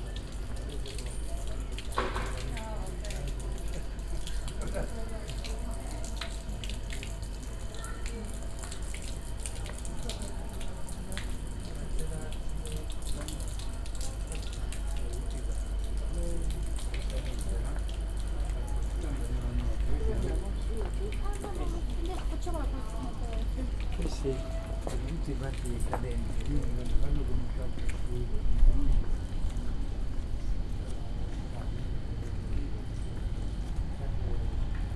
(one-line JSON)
{"title": "Rome, Trastevere - narrow streets", "date": "2014-08-31 11:02:00", "description": "(binaural recording)\nwalking around narrow streets and old tenement in Trastevere district. calm Sunday morning. rustle of water spring, church bells, residents conversations flowing out of the windows, roar of scooter elbowing its way through the streets.", "latitude": "41.89", "longitude": "12.47", "altitude": "29", "timezone": "Europe/Rome"}